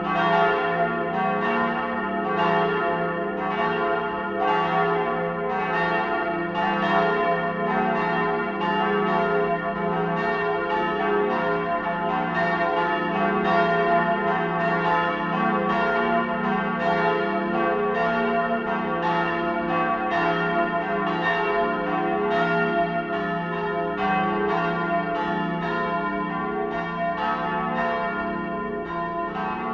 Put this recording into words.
Sunday morning church bells at Cäcilienkloster Köln. relative silence after ringing, when the bells fade out slowly. (Sony PCM D50)